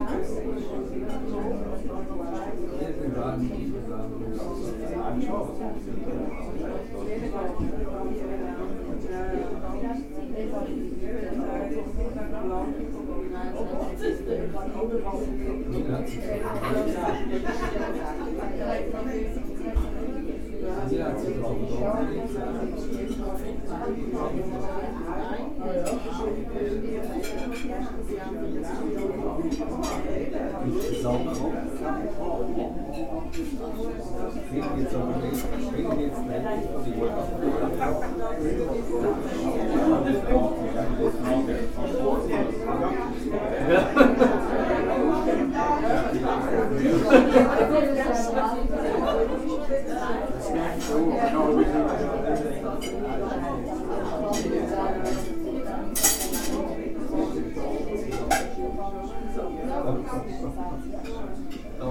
Hochfelden, Schweiz - restaurant frohsinn
restaurant frohsinn, stadlerstr. 2, 8182 hochfelden
Hochfelden, Switzerland, 1 April 2015, 19:29